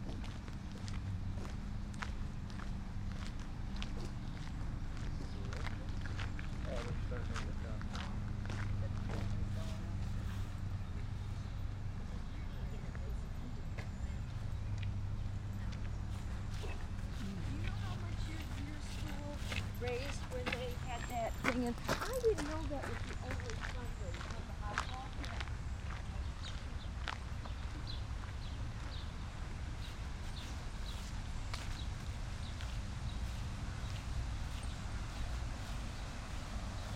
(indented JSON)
{
  "title": "Northville, MI, USA - Mill Race Village",
  "date": "2012-05-27 11:19:00",
  "description": "A morning stroll through the old village.",
  "latitude": "42.43",
  "longitude": "-83.48",
  "altitude": "246",
  "timezone": "America/Detroit"
}